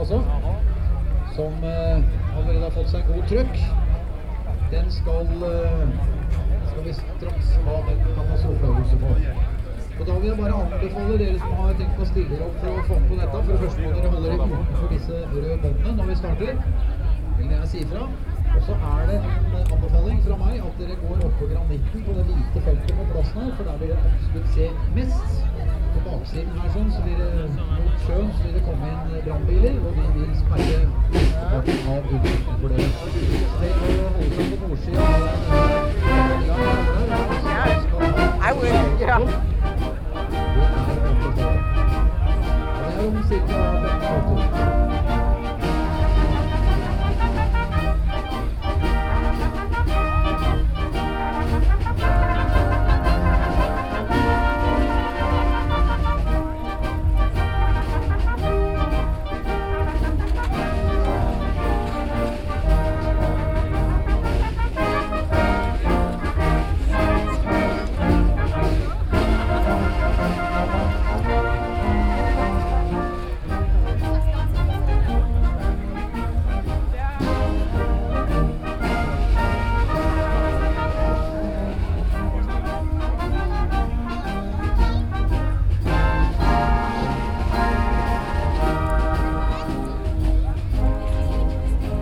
Oslo, Radhusbrygge, Fanfare
Norway, Oslo, port, fanfare, binaural
4 June 2011, 12:42